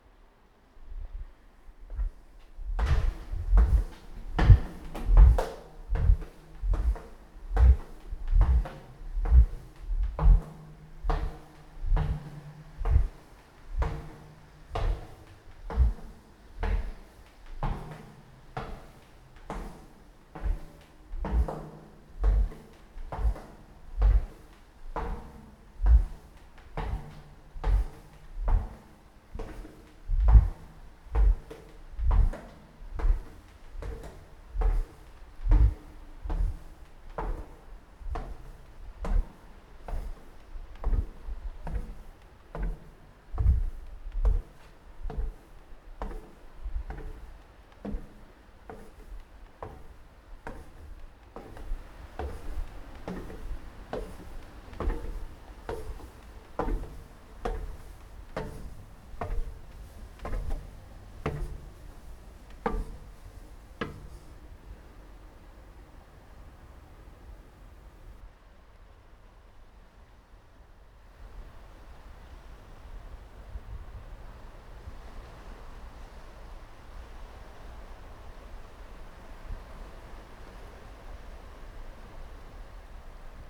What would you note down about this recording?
At Karavan's "Pasajes", dedicated to Walter Benjamin. Zoom H-1